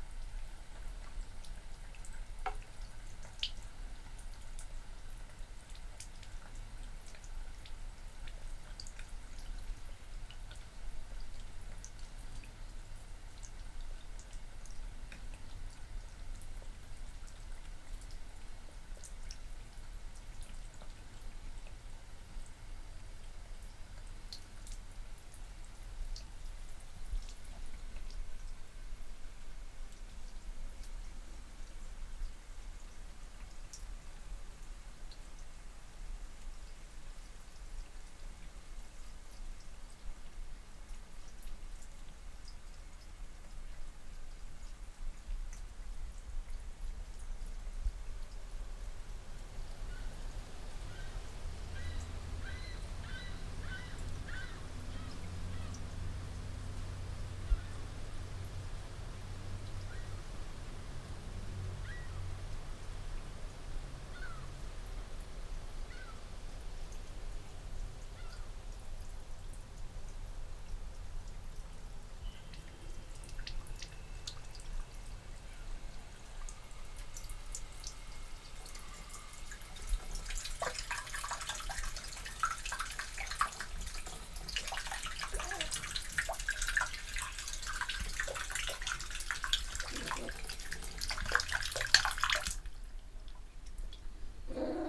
Three Pines Rd., Bear Lake, MI, USA - Tap Water, Gonzaga & the Lake (WLD2015)
Distant motor boats and jetskis heard through the window screen, as Gonzaga, the tuxedo cat, demands the bathtub's faucet be turned on for a drink. Stereo mic (Audio-Technica, AT-822), recorded via Sony MD (MZ-NF810).